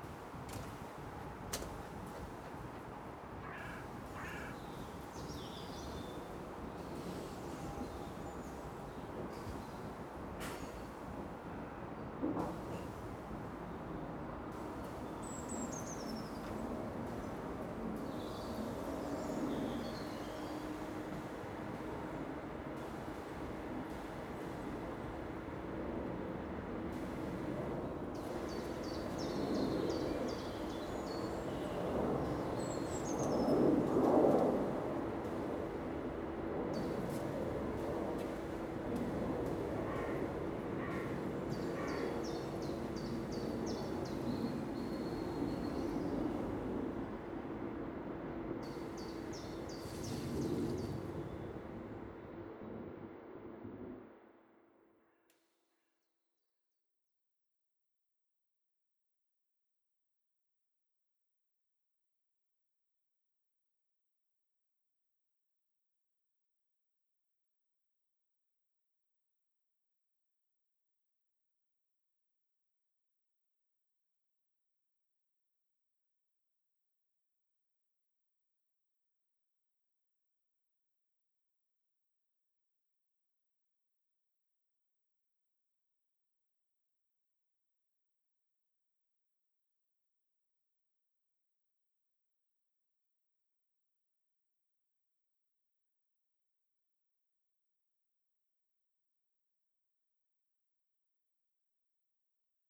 Close to the rhine side on an spring noon. The sound of a siren test alarm ending then fading into the nature ambience crossed by plane traffic sounds passing by. In the distance the sound of a construction site, ships passing by and some passengers on the footwalk.
soundmap nrw - social ambiences and topographic field recordings